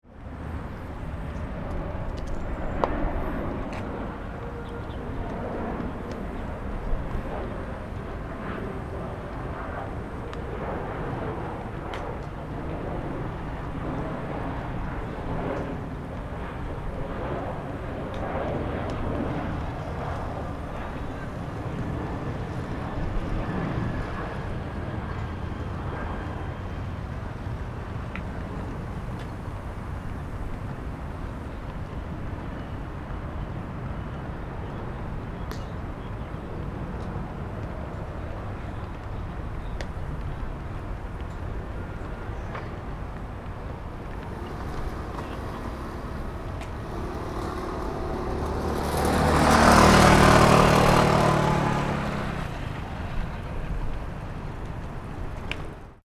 {"title": "Erchong Floodway, Sanzhong District - Aircraft flying through", "date": "2012-02-12 16:15:00", "description": "Aircraft flying through, in the Park, Rode NT4+Zoom H4n", "latitude": "25.06", "longitude": "121.47", "altitude": "2", "timezone": "Asia/Taipei"}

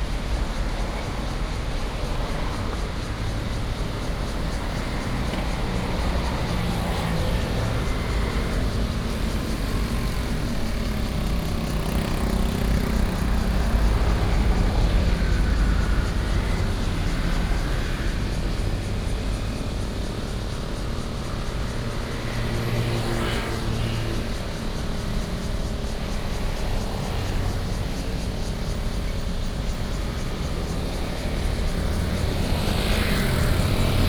{"title": "Sec., Jianguo S. Rd. - Cicadas and Traffic Sound", "date": "2015-06-28 18:58:00", "description": "By walking to the park, Cicadas cry, Traffic Sound", "latitude": "25.03", "longitude": "121.54", "altitude": "16", "timezone": "Asia/Taipei"}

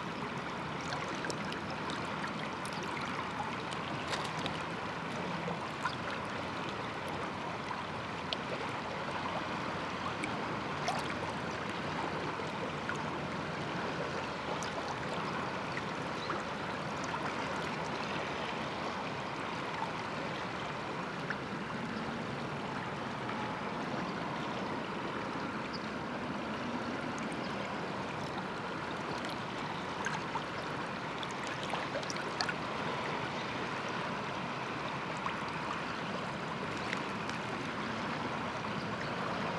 {"title": "Drava river, Slovenia - river flux, soft breeze, birds", "date": "2014-02-25 16:39:00", "latitude": "46.47", "longitude": "15.77", "altitude": "229", "timezone": "Europe/Ljubljana"}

